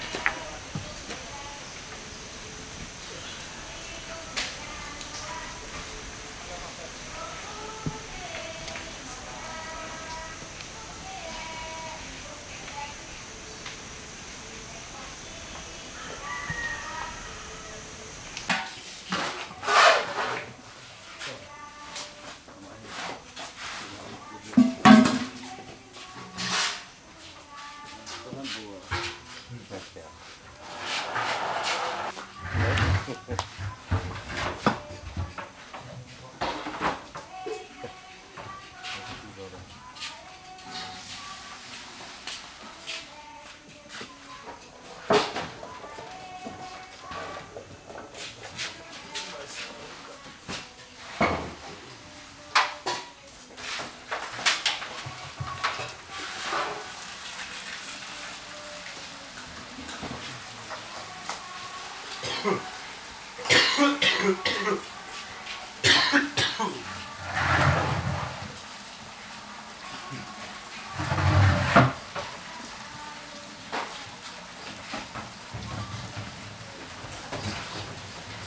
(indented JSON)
{
  "title": "Mapia- Amazonas, Brazilië - women singing while handling the chakruna leaves",
  "date": "1996-07-07 08:24:00",
  "description": "Ayahuasca is made of two main ingredients: the DMT containing vine Banesteriopsis caapi and the leaves of the chakruna - Psychotria viridis. The men prepare the vines, while the women of the church prepare the leaves. In this recording we can hear the women singing in distance while we, the men, are scraping the vine.(men and women are seperated during preparing and drinking the brew.)",
  "latitude": "-8.46",
  "longitude": "-67.44",
  "altitude": "103",
  "timezone": "America/Manaus"
}